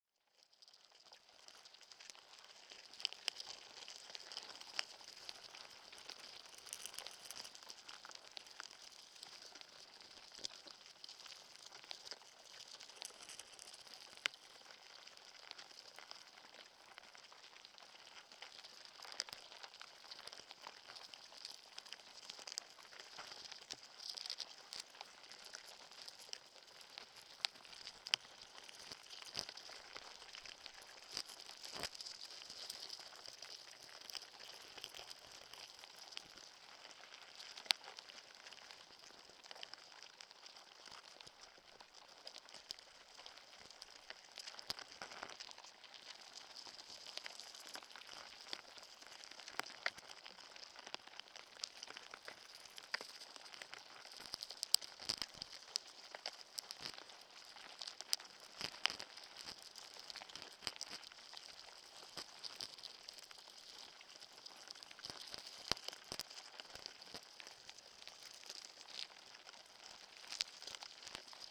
Aukštaitija National Park, Lithuania, ants
ants on the fallen branch. contact microphones
Utena district municipality, Lithuania, 26 May 2012, 16:30